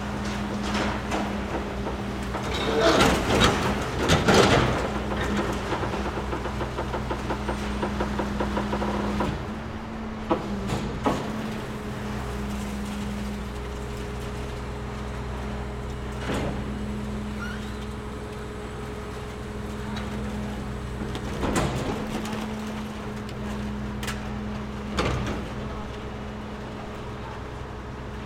E 46th St, New York, NY, USA - Demolition Truck
Demolition truck destroying office furniture.